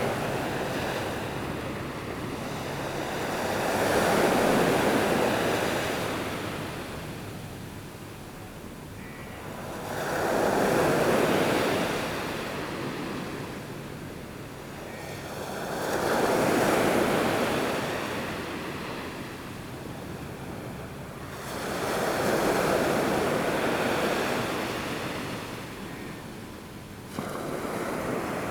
{
  "title": "Qianshuiwan Bay, New Taipei City, Taiwan - At the beach",
  "date": "2016-04-15 07:11:00",
  "description": "Aircraft flying through, Sound of the waves\nZoom H2n MS+H6 XY",
  "latitude": "25.25",
  "longitude": "121.47",
  "altitude": "1",
  "timezone": "Asia/Taipei"
}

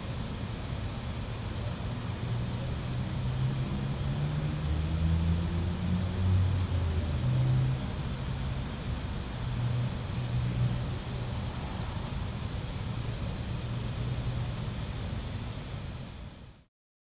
{
  "title": "Muhlenberg College Hillel, West Chew Street, Allentown, PA, USA - Back Side of Library",
  "date": "2014-12-08 15:15:00",
  "description": "This recording was taken outside the back of Muhlenberg's library.",
  "latitude": "40.60",
  "longitude": "-75.51",
  "altitude": "120",
  "timezone": "America/New_York"
}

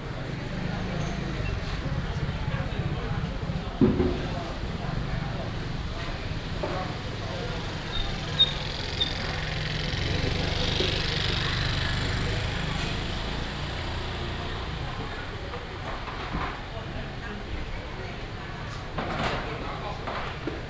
{
  "title": "自強市場, Dadu Dist., Taichung City - traditional market",
  "date": "2017-09-24 12:37:00",
  "description": "in the traditional market, traffic sound, Being sorted out, Cleaning up the market, Binaural recordings, Sony PCM D100+ Soundman OKM II",
  "latitude": "24.17",
  "longitude": "120.58",
  "altitude": "255",
  "timezone": "Asia/Taipei"
}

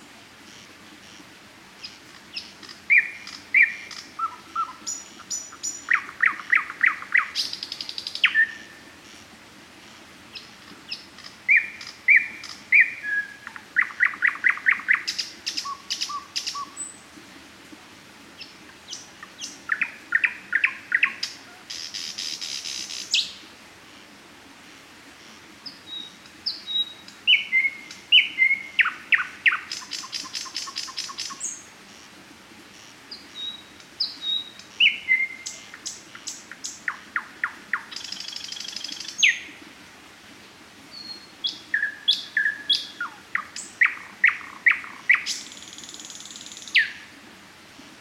{
  "title": "jubilant nightingale, south Estonia",
  "date": "2011-05-26 00:45:00",
  "description": "had to record this nightingale as it is singing for days now",
  "latitude": "58.21",
  "longitude": "27.07",
  "altitude": "44",
  "timezone": "Europe/Tallinn"
}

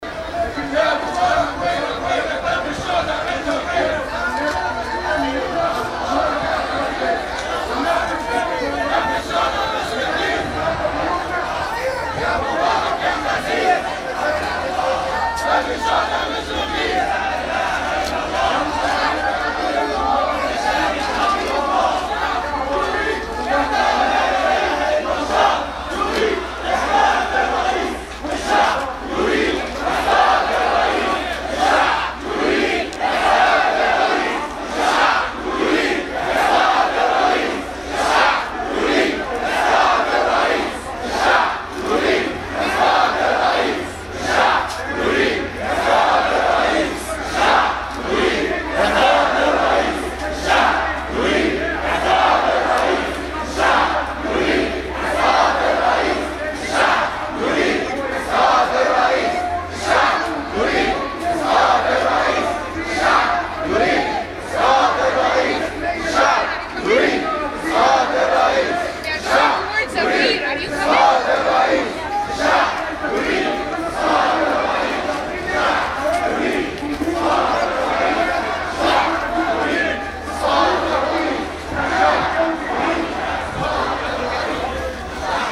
{
  "title": "Funeral of a victim of the clashes",
  "date": "2011-01-30 12:59:00",
  "description": "Spontaneous gathering of people after the funeral of a young victim of the clashes.",
  "latitude": "31.20",
  "longitude": "29.90",
  "timezone": "Africa/Cairo"
}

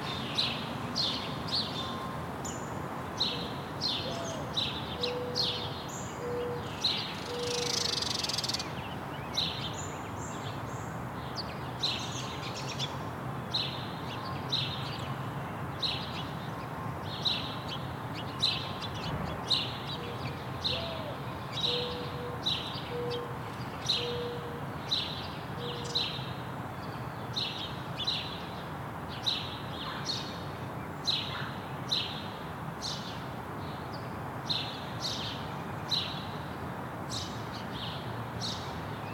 {
  "title": "New York, NY, USA - The Seuffert Bandshell",
  "date": "2022-05-04 11:45:00",
  "description": "The sound of birds recorded in front of the Seuffert Bandshell - a curved surface designed to reflect sound outwards in one direction.",
  "latitude": "40.70",
  "longitude": "-73.86",
  "altitude": "50",
  "timezone": "America/New_York"
}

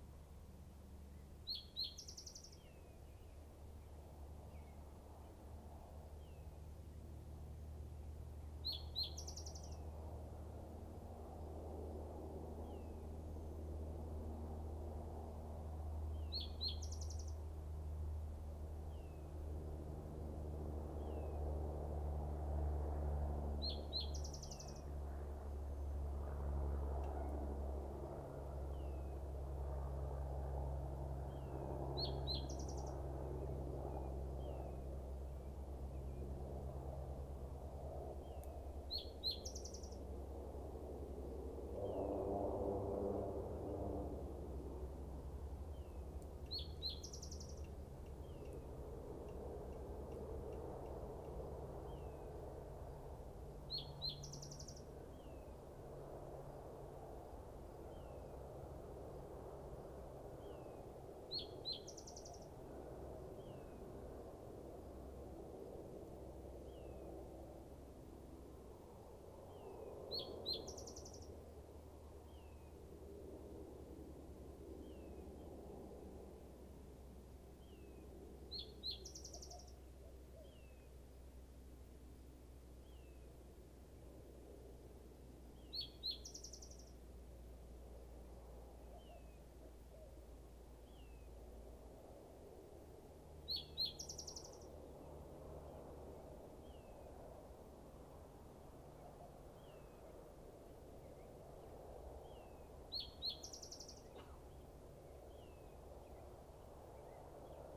{
  "date": "2018-06-25 19:06:00",
  "description": "chinchilla listening/recording. recorded on a zoom h4n pro handy recorder",
  "latitude": "35.57",
  "longitude": "-105.76",
  "altitude": "2256",
  "timezone": "America/Denver"
}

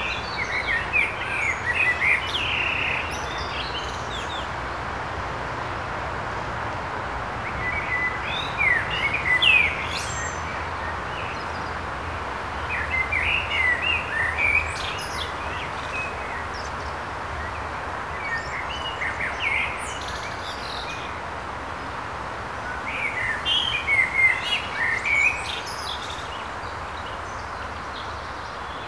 {
  "title": "Kornik, arboretum at the castle - bird dome",
  "date": "2014-05-04 11:21:00",
  "description": "abundance of birds in the branches above me. chirps resonating from all directions.",
  "latitude": "52.24",
  "longitude": "17.10",
  "altitude": "77",
  "timezone": "Europe/Warsaw"
}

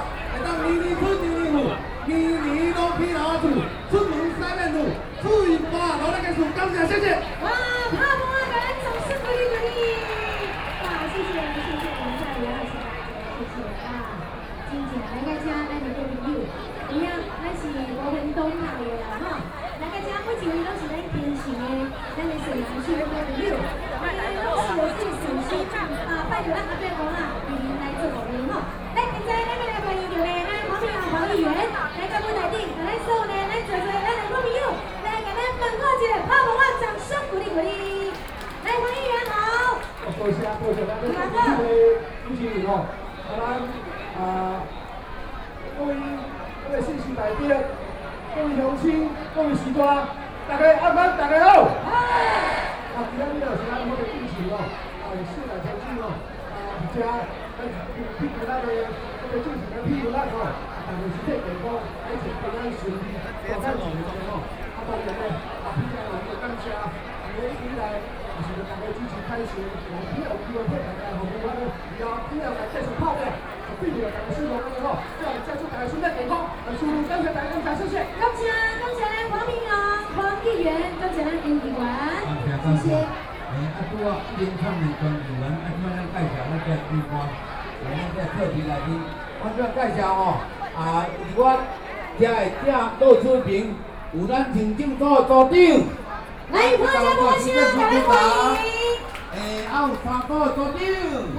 {
  "title": "Beitou, Taipei - wedding",
  "date": "2013-09-30 19:16:00",
  "description": "The wedding scene in the street, Sony PCM D50 + Soundman OKM II",
  "latitude": "25.13",
  "longitude": "121.50",
  "altitude": "11",
  "timezone": "Asia/Taipei"
}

